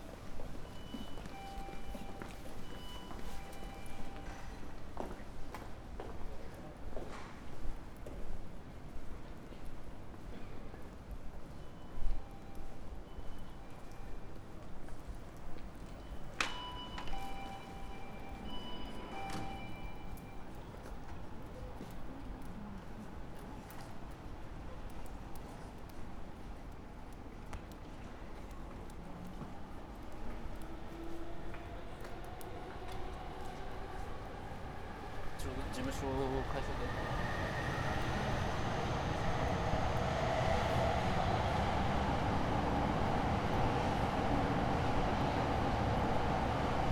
Tokyo, Taito district - sounds of the streets on the way to hotel
sounds of the streets in the evening. restaurants, water drops, phone conversations, push carts...
北葛飾郡, 日本, 28 March 2013, ~22:00